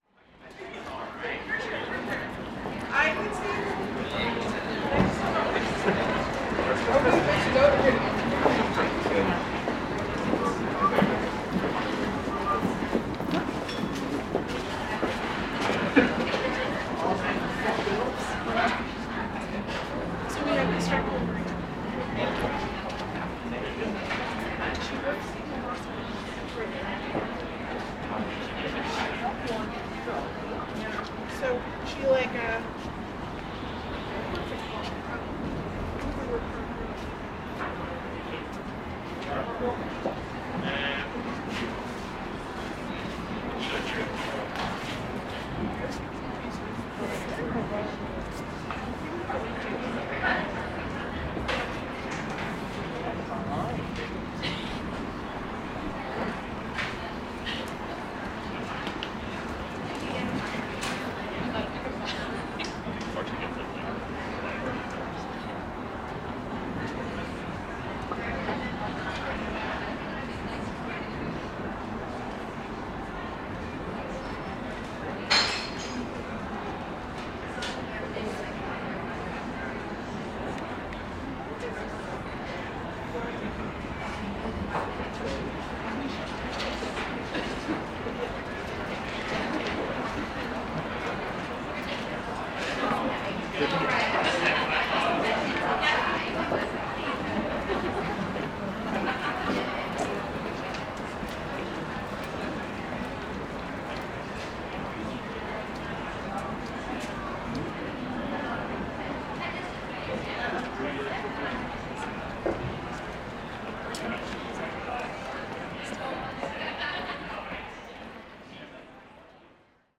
Recording in front of two bars (Dirty Onion and Thirsty Goat), queues of people waiting to go in, passersby’s, and bar chatter. This is a day before Lockdown 2 in Belfast.
County Antrim, Northern Ireland, United Kingdom, October 2020